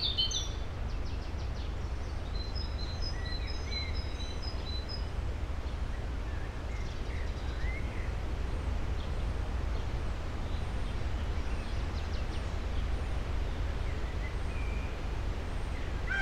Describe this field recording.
screams and other voices of peacocks, birds, wind through tree crowns ... sonic research of peacock voices at their double caging site - island as first, metallic pavilion as second